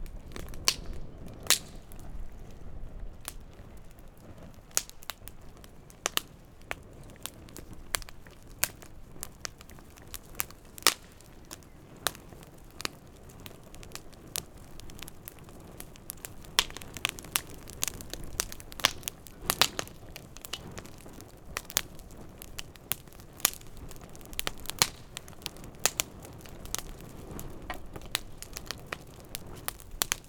Dartington, Devon, UK - soundcamp2015dartington crackling fire
2 May 2015, 18:33